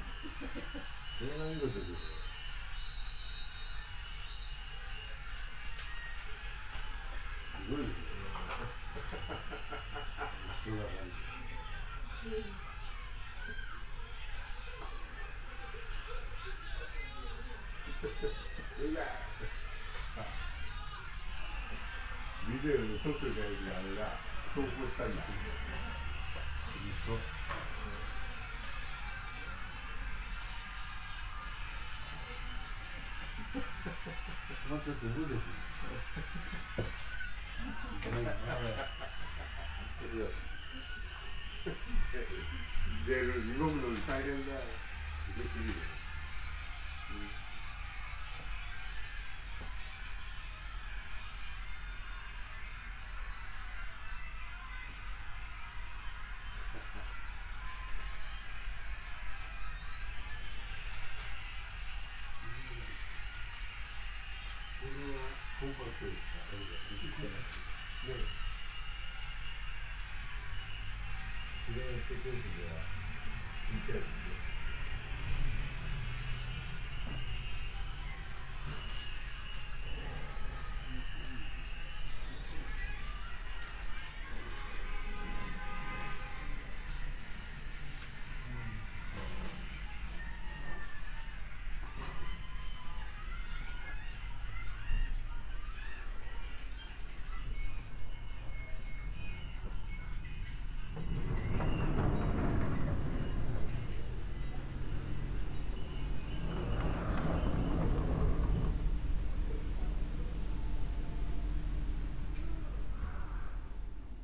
chome asakusa, tokyo - antique shop
two gentlemen watching television and laughing, slide doors
Tokyo, Japan, 9 November 2013, ~18:00